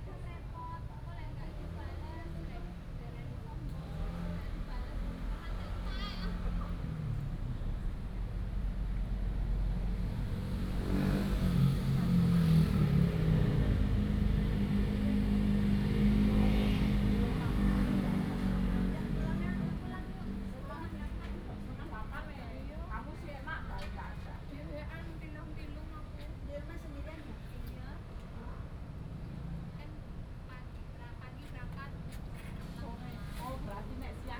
泰順公園, Taipei City - in the Park
Traffic Sound, in the park
3 July, ~19:00